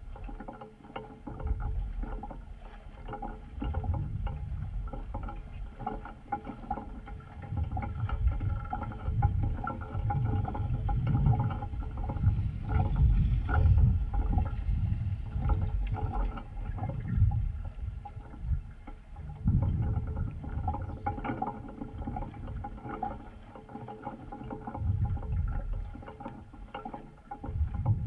{"title": "Utena, Lithuania, contact on pipe", "date": "2018-09-03 17:50:00", "description": "some pipe dipped in water. contact microphones", "latitude": "55.52", "longitude": "25.63", "altitude": "118", "timezone": "GMT+1"}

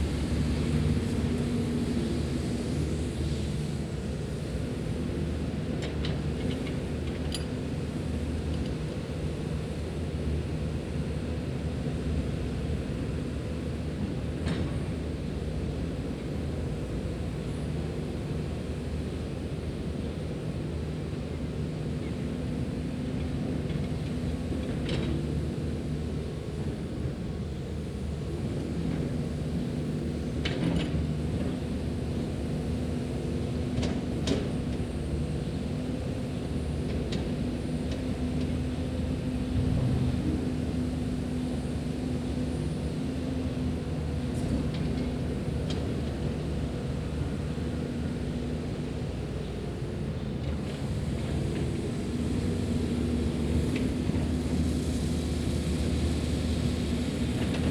{"title": "St, Prairie Du Sac, WI, USA - Residential Street Resurfacing", "date": "2019-04-30 15:11:00", "description": "Road crew working to resurface a residential street. Jackhammer at 11ish minute mark. Recorded using a Tascam DR-40 Linear PCM Recorder on a tripod.", "latitude": "43.29", "longitude": "-89.73", "altitude": "238", "timezone": "America/Chicago"}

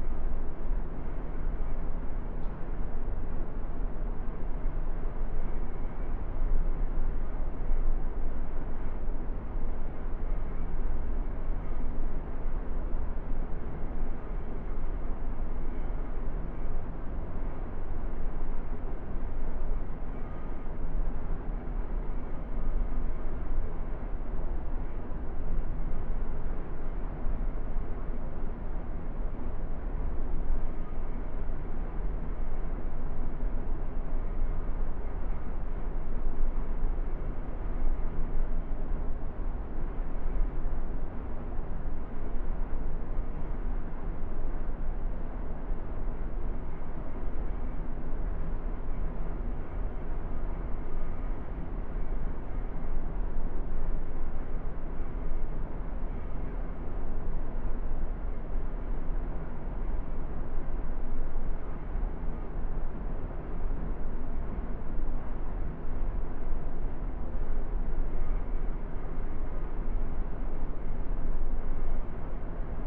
{"title": "Altstadt, Düsseldorf, Deutschland - Düsseldorf, Apostel Kapelle, Salm Bestattungen", "date": "2013-01-24 10:40:00", "description": "Inside a small private chapel that is owned by Carl Salm Bestattungen. The sound of the room ventilation varying silenty in the empty candle lighted chapel with a decorated coffin.\nThis recording is part of the intermedia sound art exhibition project - sonic states\nsoundmap nrw - topographic field recordings, social ambiences and art places", "latitude": "51.23", "longitude": "6.77", "altitude": "43", "timezone": "Europe/Berlin"}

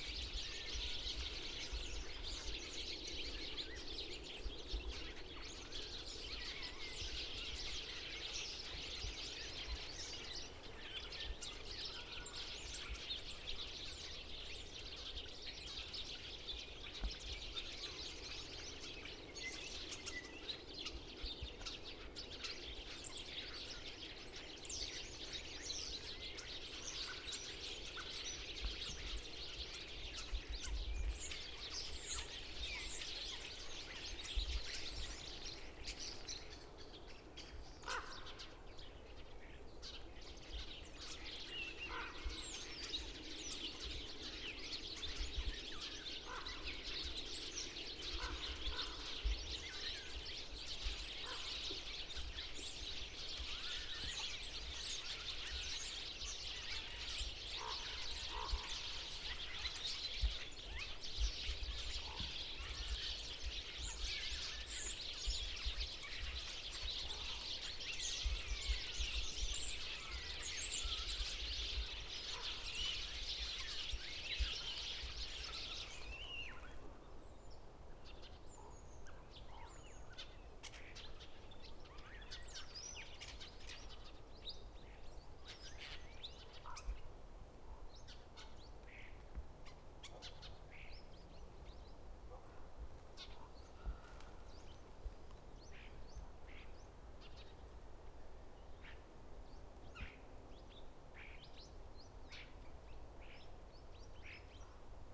{"title": "Suchy Las, road surrounding the landfill site - sparrow meeting", "date": "2013-02-03 15:08:00", "description": "came across a bush with hundreds of sparrows seating and chirpping away on it. they moment they noticed me they went silent. only a few squeaks where to hear and gurgle of ravens reverberated in the forest.", "latitude": "52.50", "longitude": "16.88", "altitude": "103", "timezone": "Europe/Warsaw"}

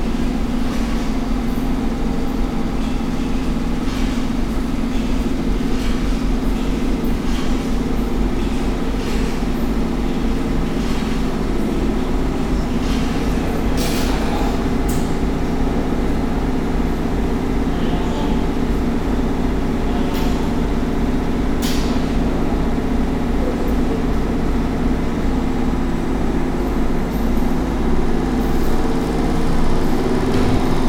{"title": "Ottignies-Louvain-la-Neuve, Belgique - Washing machines", "date": "2018-08-22 16:45:00", "description": "A coin-operated laundry, with a lot of washing machines operating. Soporific sound !", "latitude": "50.66", "longitude": "4.56", "altitude": "60", "timezone": "GMT+1"}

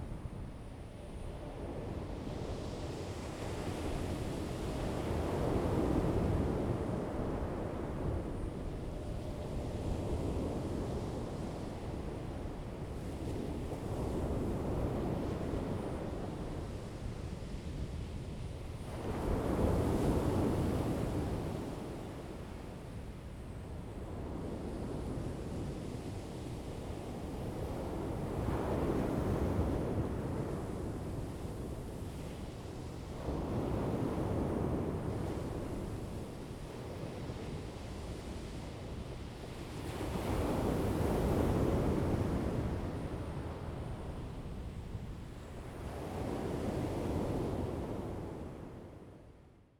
{"title": "大鳥村, Dawu Township - Sound of the waves", "date": "2014-09-05 16:33:00", "description": "In the beach, Sound of the waves, The weather is very hot\nZoom H2n MS +XY", "latitude": "22.39", "longitude": "120.92", "timezone": "Asia/Taipei"}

